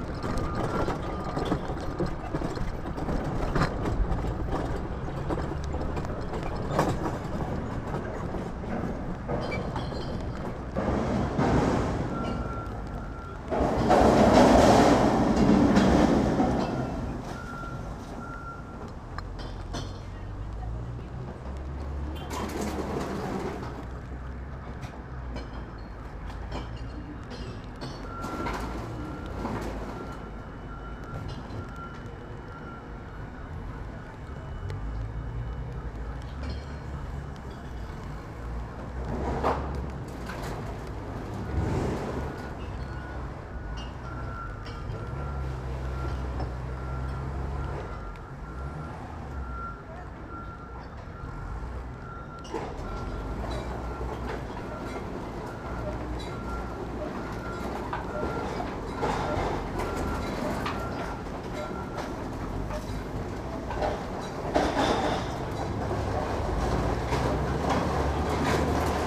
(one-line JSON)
{"title": "Northwest Berkeley, Berkeley, CA, USA - recycling center 2.", "date": "2012-03-27 11:30:00", "description": "five months later, same place -- bottles return worth $17.01, unfortunate business but good noisy, industrial recording", "latitude": "37.88", "longitude": "-122.31", "altitude": "4", "timezone": "America/Los_Angeles"}